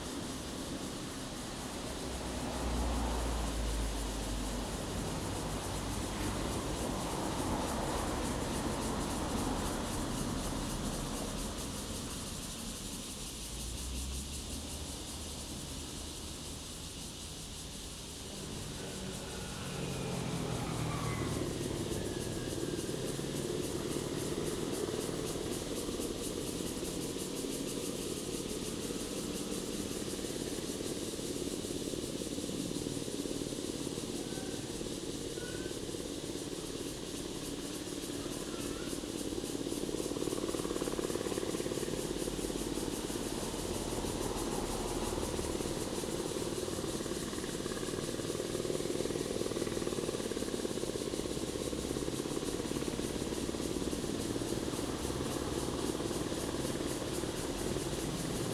秀林鄉銅門村, Hualien County - Rest area
Construction Noise, Cicadas sound, Traffic Sound, The weather is very hot
Zoom H2n MS+ XY